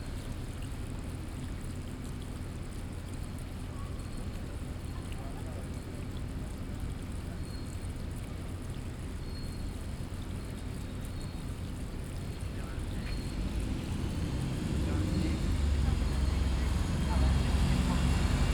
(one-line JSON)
{"title": "Parque de la Ciudadela, Passeig de Picasso, Barcelona, Barcelona, España - Sculpture Fountain \"Homenatge a Picasso\" by Antoni Tàpies", "date": "2015-07-18 13:07:00", "description": "Water recording made during World Listening Day.", "latitude": "41.39", "longitude": "2.18", "altitude": "16", "timezone": "Europe/Madrid"}